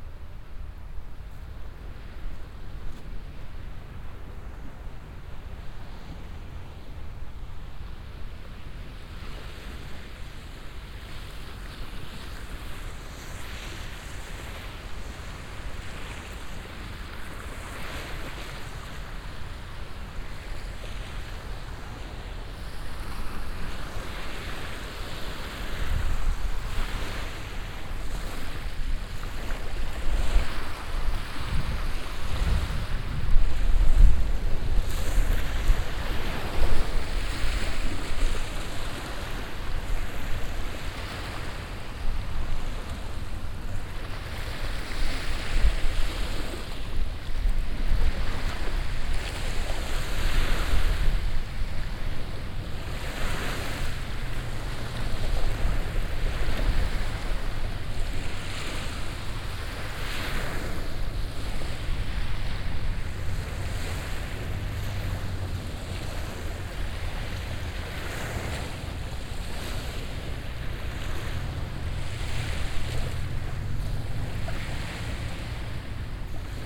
{"title": "vancouver, nw marine drive, beach, wind + water", "description": "at the beach in cold windy autum afternoon, four tank ships ankering close by, the city in the far background, seagulls, sun dawn\nsoundmap international\nsocial ambiences/ listen to the people - in & outdoor nearfield recordings", "latitude": "49.28", "longitude": "-123.21", "altitude": "-2", "timezone": "GMT+1"}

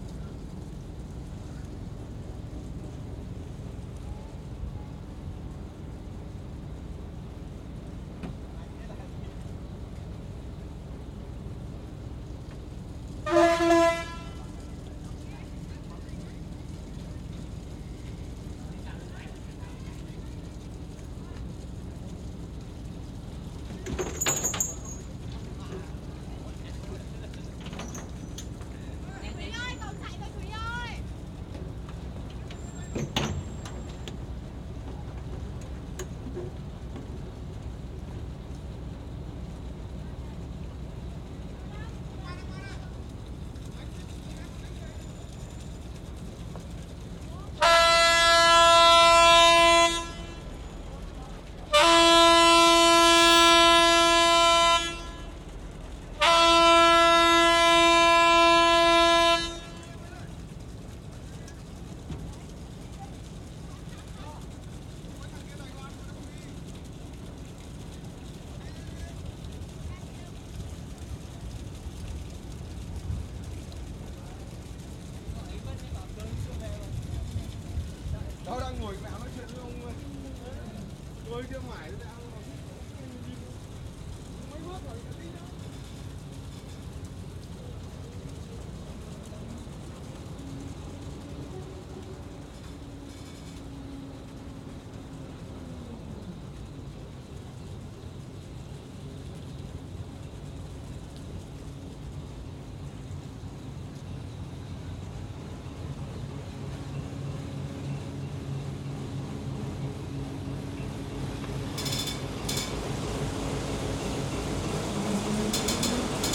{"title": "Máy Chai, Ngô Quyền, Hải Phòng, Vietnam - Départ Ferry Hai Phong Mai 1999", "date": "1999-05-13 09:54:00", "description": "Dans la foule\nMic Sony stéréo + Minidisc Walkman", "latitude": "20.88", "longitude": "106.70", "altitude": "3", "timezone": "Asia/Ho_Chi_Minh"}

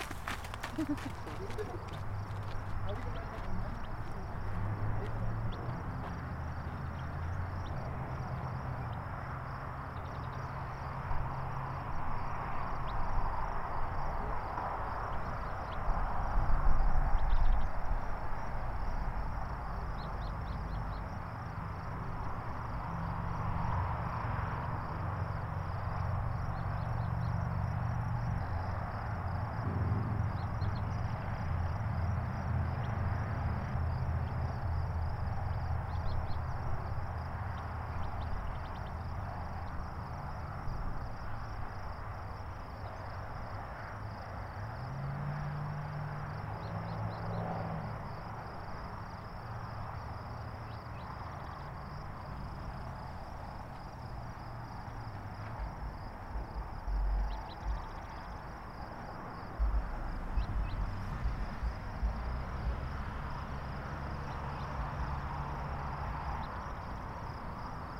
{"title": "Red Rock Canyon Trail, Colorado Springs, CO, USA - RedRocksOpenSpaceNearMainEntrance13May2018", "date": "2018-05-13 16:40:00", "description": "Soundscape includes cars, insects, birds chirping, and people walking/ talking.", "latitude": "38.85", "longitude": "-104.88", "altitude": "1883", "timezone": "America/Denver"}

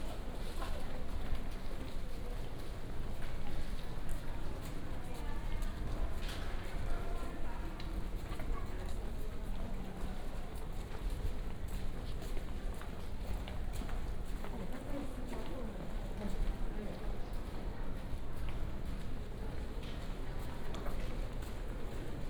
{"title": "National Library of Public Information, Taichung City - Walking inside and outside the library", "date": "2017-04-29 16:56:00", "description": "Walking inside and outside the library", "latitude": "24.13", "longitude": "120.67", "altitude": "63", "timezone": "Asia/Taipei"}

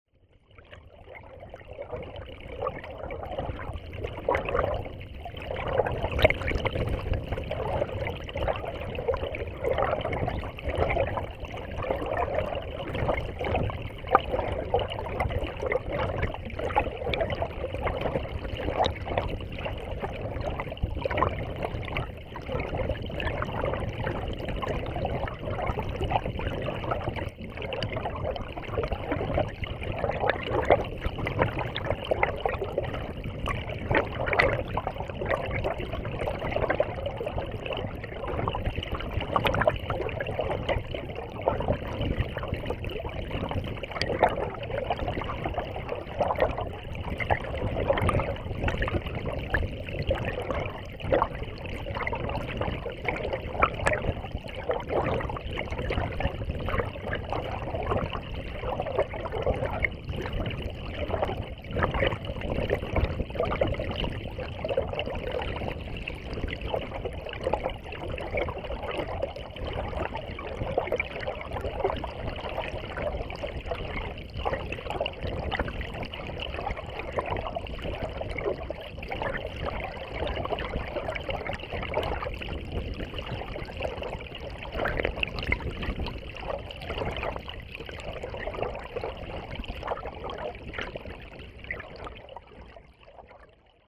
{"title": "Mont-Saint-Guibert, Belgique - The river Orne", "date": "2016-04-12 15:20:00", "description": "Recording of the river Orne, in a pastoral scenery.\nAudioatalia contact microphone used mono.", "latitude": "50.63", "longitude": "4.63", "altitude": "96", "timezone": "Europe/Brussels"}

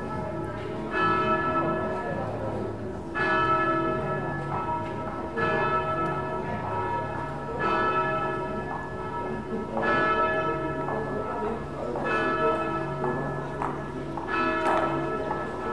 {"title": "Outside, Cafe Ebel, Retezova, Prague 1", "date": "2011-06-26 11:30:00", "description": "Sitting outside Cafe Ebel, Retezova, Prague 1, Sunday Morning", "latitude": "50.09", "longitude": "14.42", "altitude": "203", "timezone": "Europe/Prague"}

